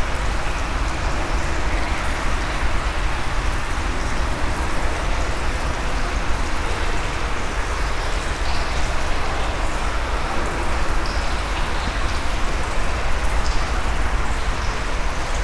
Vilvoorde, Belgium - Underground river
Sound of the very dirty underground river called Senne, or Zenne in dutch. It's a large underground tunnel where a crappy river is flowing below the city.